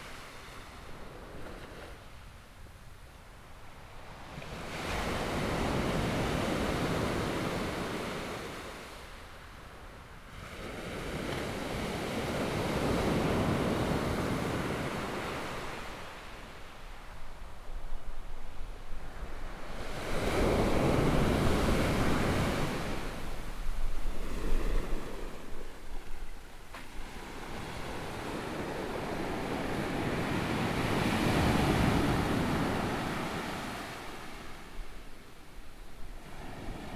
Sound of the waves
Binaural recordings
Zoom H4n+ Soundman OKM II + Rode NT4
Hualien County, Hualian City, 花蓮北濱外環道, 24 February 2014